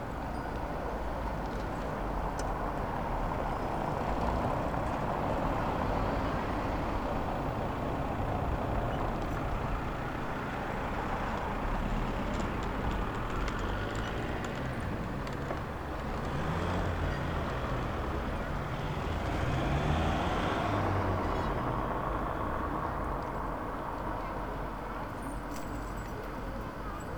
Berlin: Vermessungspunkt Maybachufer / Bürknerstraße - Klangvermessung Kreuzkölln ::: 12.04.2011 ::: 16:57
Berlin, Germany, 12 April 2011